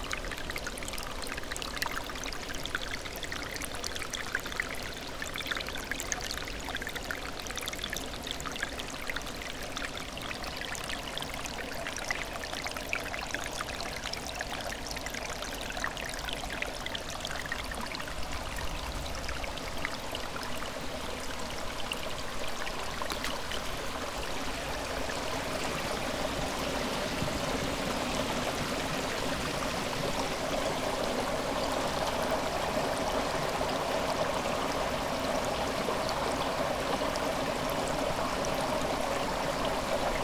moss garden, Studenice, Slovenija - fluid moss garden, drops
2 August 2015, 20:06